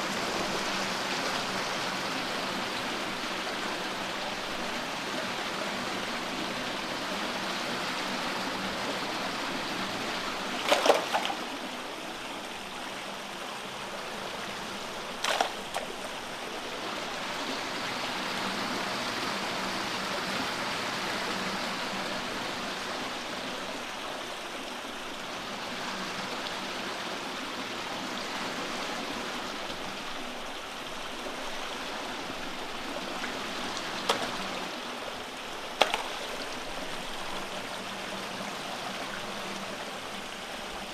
river simene, symondsbury. uk - stream